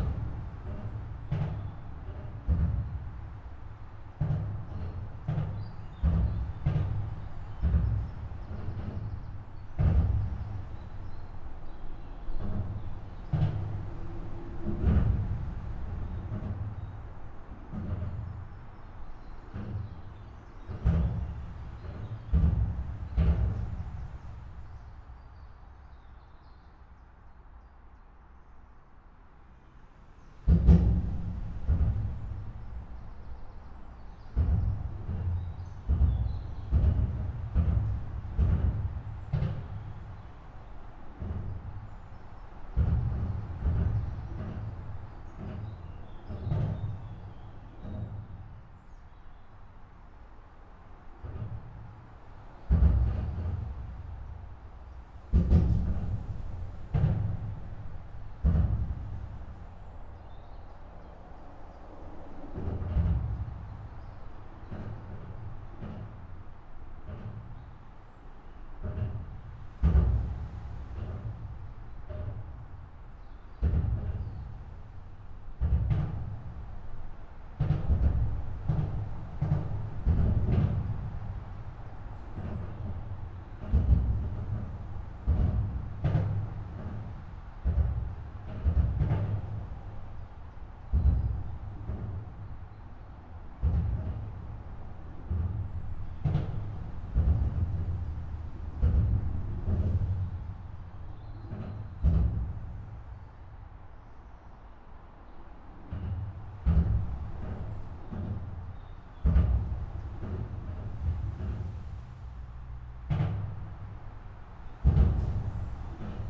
Norwich Southern Bypass, Norwich, UK - Underneath A47 Roadbridge
Recorded with a Zoom H1n with 2 Clippy EM272 mics arranged in spaced AB.
East of England, England, United Kingdom